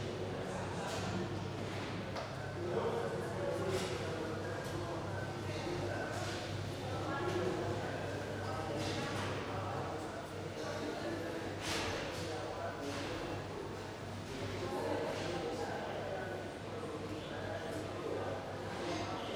Bezalel Academy of Arts and Design - Cafeteria - Bezalel, noon, Cafeteria
מחוז ירושלים, מדינת ישראל, 21 March 2016